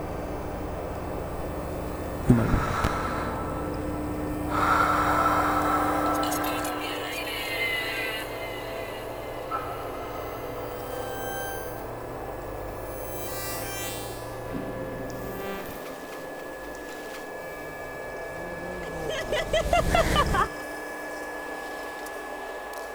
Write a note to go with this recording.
At the krypta of Galeria Podzemka. you can find more informations here: soundmap Chisinau - topographic field recordings, sound art installations and social ambiences